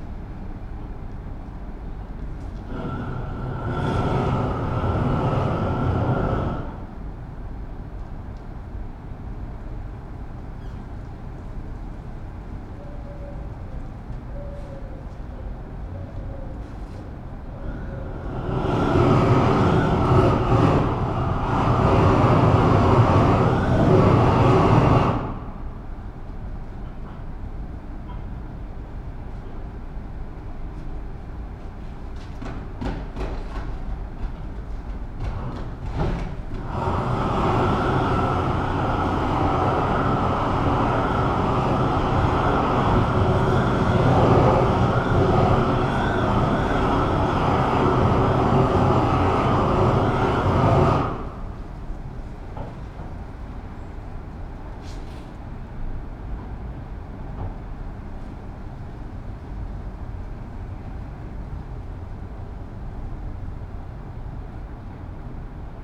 Workers putting macadam roofing for water isolation.
Saint-Gilles, Belgium, December 2011